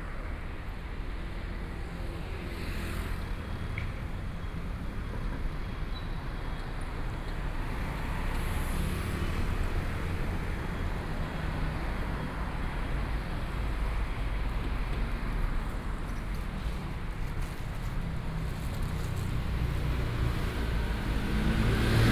Jilin Rd., Taipei City - on the Road
walking on the road, Environmental sounds, Traffic Sound, Walking towards the north direction